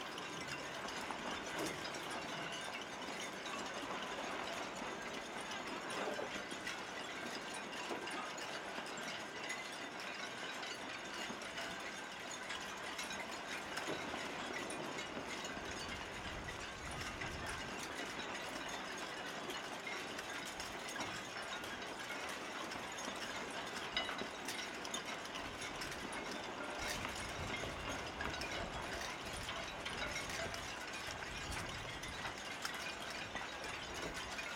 Zürich, Mythenquai, Schweiz - Bootshafen
Segelmasten im Wind. Kleines Flugzeug.